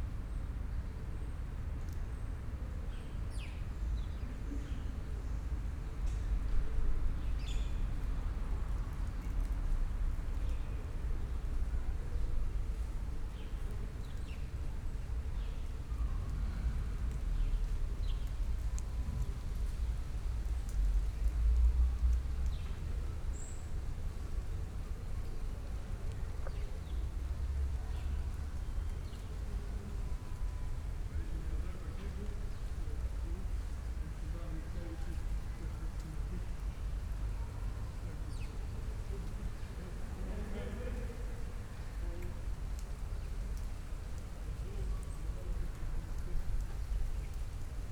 Stallschreiberstraße, Berlin Kreuzberg - residential area, inner yard ambience
Stallschreiberstraße, Berlin Kreuzberg, ambience at an abandoned littel playground between the house, autumn Sunday afternoon
(Sony PCM D50, DPA4060)